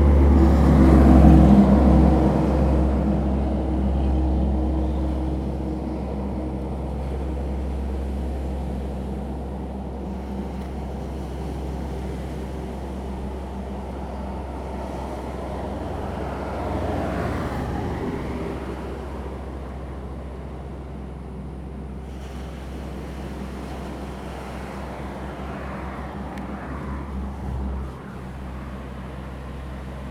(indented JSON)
{
  "title": "多良村, Taimali Township - the waves and Traffic Sound",
  "date": "2014-09-05 17:28:00",
  "description": "Sound of the waves, Traffic sound\nZoom H2n MS +XY",
  "latitude": "22.48",
  "longitude": "120.95",
  "altitude": "28",
  "timezone": "Asia/Taipei"
}